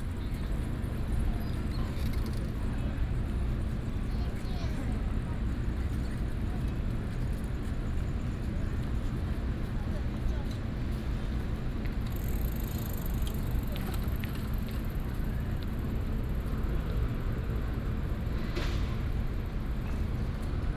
臺灣大學綜合體育館, Da’an Dist., Taipei City - Outside the stadium
Outside the stadium, There are activities performed within the stadium, The cries of the masses
Da’an District, Taipei City, Taiwan, 25 July 2015, ~19:00